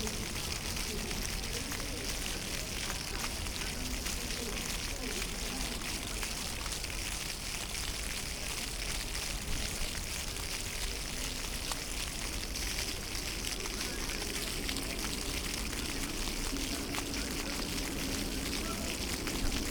{"title": "Breslauer Platz, Hbf Köln, Deutschland - fountain", "date": "2018-07-05 21:00:00", "description": "fountain at Breslauer Platz, near Köln main station / Hauptbahnhof\n(Sony PCM D50, internal mics)", "latitude": "50.94", "longitude": "6.96", "altitude": "49", "timezone": "GMT+1"}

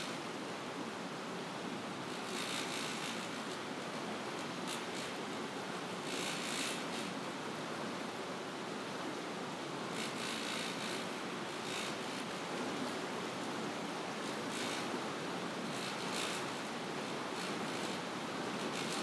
{"title": "Hastedter Osterdeich, Bremen, Germany - Hydroelectric power plant", "date": "2020-05-13 14:00:00", "description": "Using binaural microphones, capturing a buzzing sound and the sound of flowing water.", "latitude": "53.06", "longitude": "8.87", "altitude": "3", "timezone": "Europe/Berlin"}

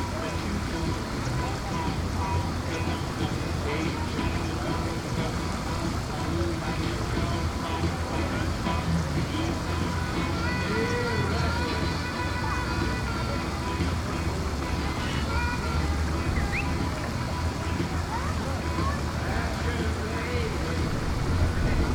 {"title": "Ciudad Mitad del Mundo, Quito, Equador - Ciudad Mitad del Mundo - Middle City of the World", "date": "2019-04-12 14:13:00", "description": "Pausa para descanso na Ciudad Mitad del Mundo, em Quito, próximo a um chafariz. De um restaurante próximo vinha a música Roadhouse Blues, da banda The Doors.\nPause to rest in Ciudad Mitad del Mundo, in Quito, near a fountain. From a nearby restaurant came the song Roadhouse Blues, from the band The Doors.\nGravador Tascam DR-05.\nTascam recorder DR-05.", "latitude": "0.00", "longitude": "-78.45", "altitude": "2468", "timezone": "GMT+1"}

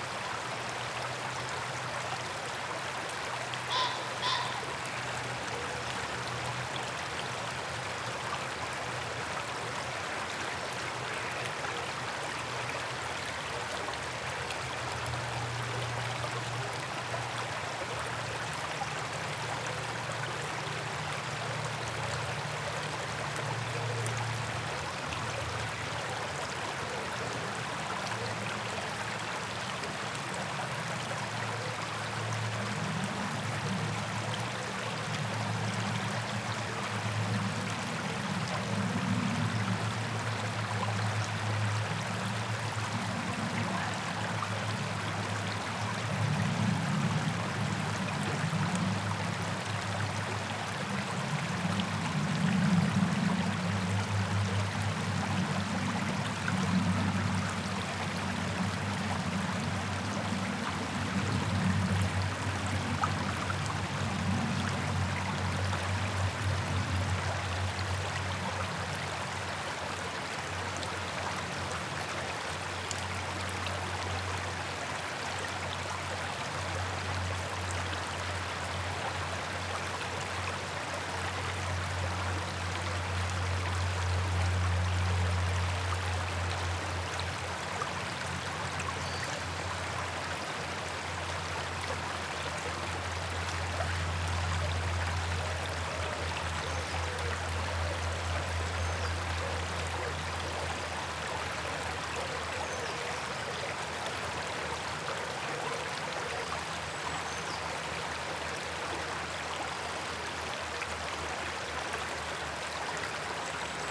{"title": "Walking Holme Digley Beck", "date": "2011-04-19 03:45:00", "description": "Beautiful wooded part of the river. Some kids playing in the background.", "latitude": "53.56", "longitude": "-1.83", "altitude": "219", "timezone": "Europe/London"}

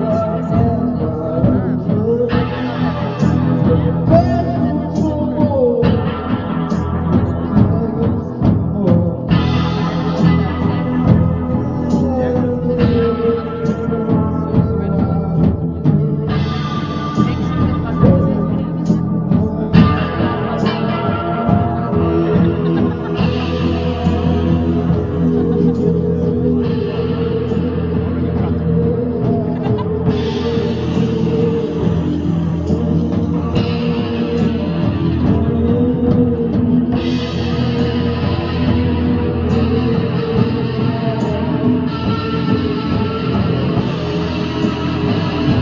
{"title": "Concert at Der Kanal, Weisestr. - Der Kanal, Season of Musical Harvest: STRANGE FORCES", "date": "2010-09-11 21:25:00", "description": "We are bringing the crops in, the Season of Musical Harvest is a happy season. This one was quite psychedelic: STRANGE FORCES is a Berlin based Band from Australia, we hear one song of their mood driving music.", "latitude": "52.48", "longitude": "13.42", "altitude": "60", "timezone": "Europe/Berlin"}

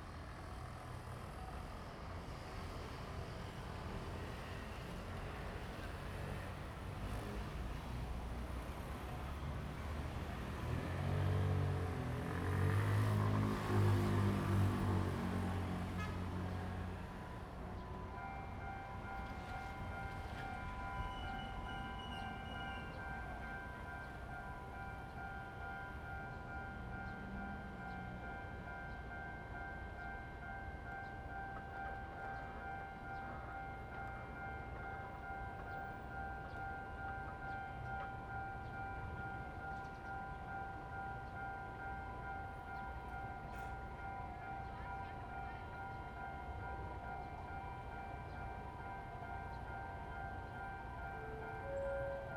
{"title": "新農街二段209巷, Yangmei Dist., Taoyuan City - Railroad Crossing", "date": "2017-08-11 17:09:00", "description": "Railroad Crossing, Traffic sound, The train runs through\nZoom H2n MS+XY", "latitude": "24.91", "longitude": "121.17", "altitude": "177", "timezone": "Asia/Taipei"}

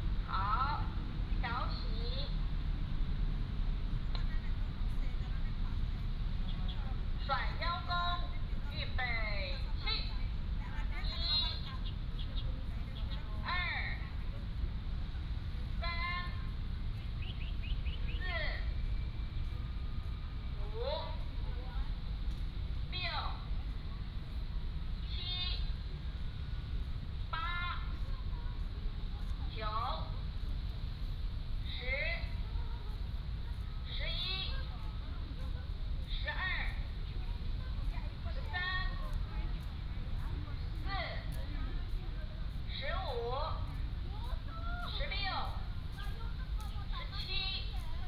{"title": "臺南公園, Tainan City - Healthy gymnastics", "date": "2017-02-18 16:20:00", "description": "Many elderly people are doing aerobics", "latitude": "23.00", "longitude": "120.21", "altitude": "26", "timezone": "GMT+1"}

